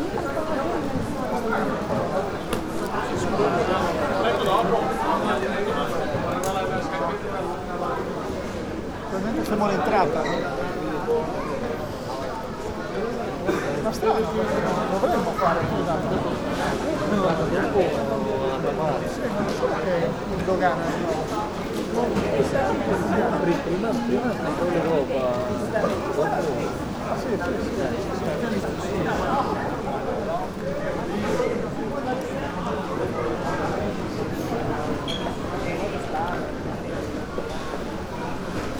airport, dubai - languages
in-between times